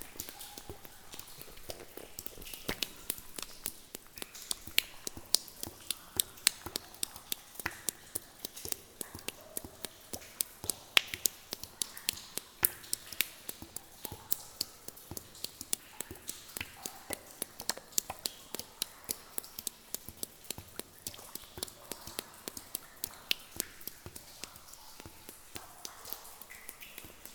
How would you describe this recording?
In an underground mine, the sweet ambience of various tunnels, walking slowly into the water.